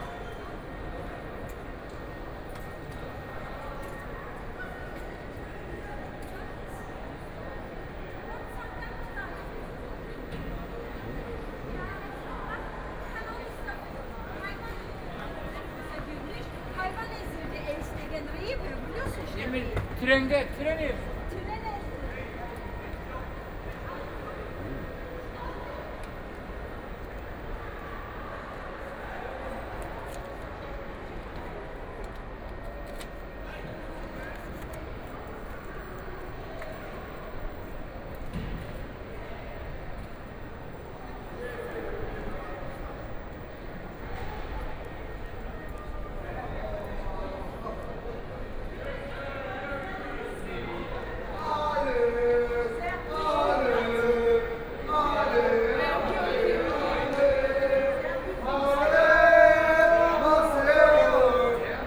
Hauptbahnhof, Munich 德國 - Walking in the station
Walking in the Central Station at night, Walking in the station hall
Munich, Germany, 11 May, ~00:00